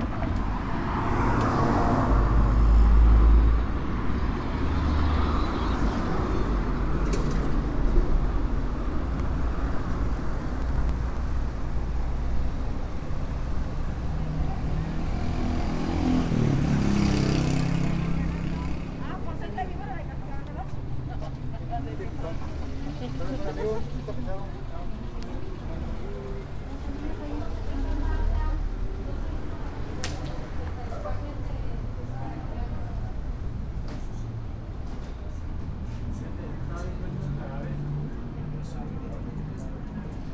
I made this recording on September 8th, 2021, at 2:43 p.m.
I used a Tascam DR-05X with its built-in microphones and a Tascam WS-11 windshield.
Original Recording:
Type: Stereo
Caminando por la Av. Miguel Alemán desde casi Blvd. Adolfo López Mateos hacia el Mercado Aldama, y luego caminando adentro y saliendo.
Esta grabación la hice el 8 de septiembre de 2021 a las 14:43 horas.